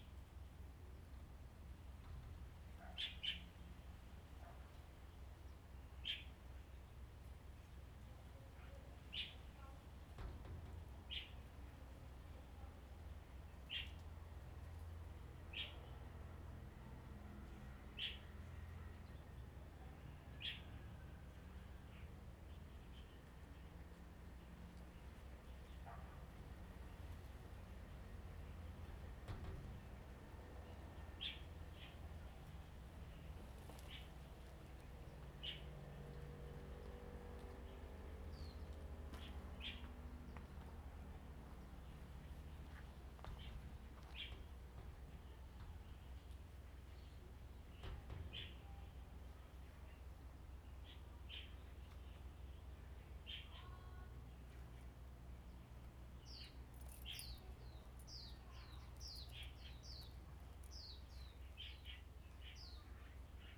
杉福村, Hsiao Liouciou Island - In the old house
Birds singing, In the old house
Zoom H2n MS +XY
Pingtung County, Taiwan